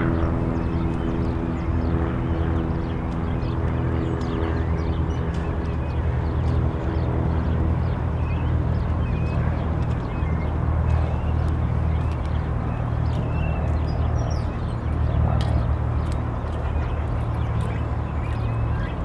Young cormorants calls, located on a small island on the northen lake. Planes, pedestrians. Distant metro construction site noise.
Cris de jeunes cormorans. Un avion. Des passants. Bruit lointain du chantier du métro de Copenhague.
10 June 2017, 11:16